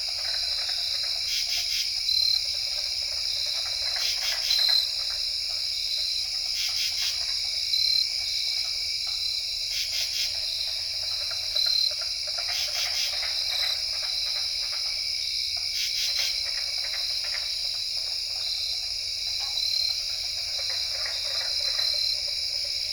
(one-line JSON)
{"title": "Wharton State Forst, NJ, USA - Bogs of Friendship, Part One", "date": "2007-07-31 22:04:00", "description": "Katydids and carpenter frogs at the abandoned cranberry bogs of Friendship, NJ, located in Wharton State Forest, New Jersey; the heart of the pine barrens. This is an old recording, but I only recently discovered aporee. Microtrack recorder and AT3032 omnidirectionals", "latitude": "39.74", "longitude": "-74.59", "altitude": "17", "timezone": "America/New_York"}